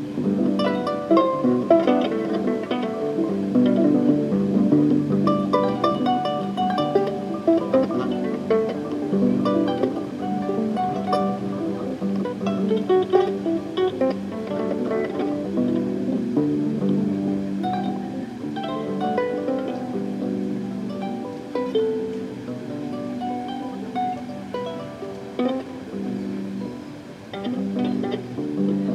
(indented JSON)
{
  "title": "Le Plateau-Mont-Royal, Montreal, QC, Canada - Improvisation from a stranger who play a modified electracoustic kora in the park Lafontaine",
  "date": "2016-09-05 03:14:00",
  "description": "Improvisation from a stranger who play a modified electracoustic kora in the park Lafontaine\nREC: DPA 4060, AB",
  "latitude": "45.53",
  "longitude": "-73.57",
  "altitude": "41",
  "timezone": "America/Toronto"
}